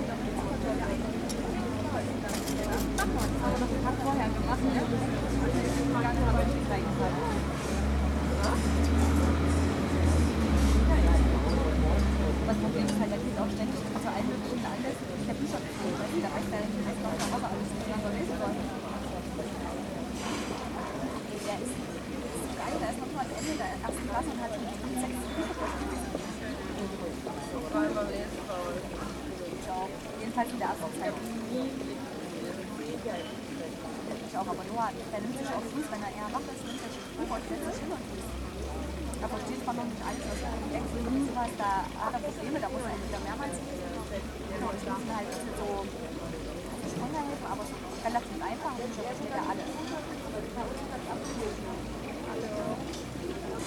Sternplatz Coffee Store, Bayreuth, Deutschland - Sternplatz Coffee Store
Sternplatz - Coffee Store